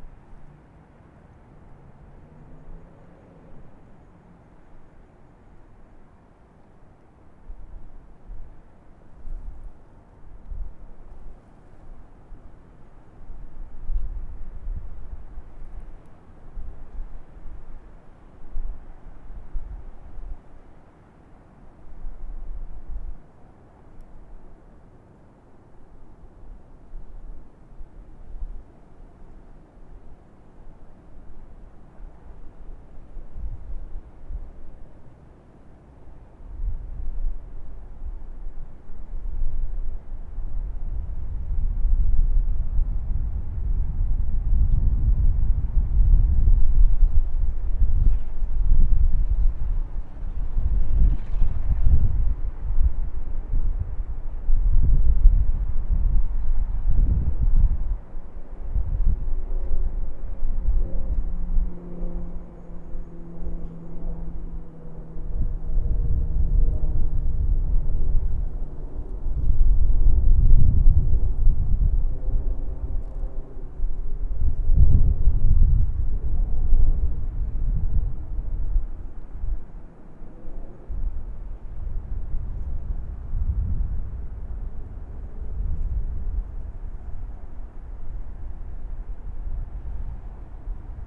Audio recorded on the bridge outside of the Iowa Memorial Union facing south towards the river. Recorded on H5N Zoom
Johnson County, Iowa, United States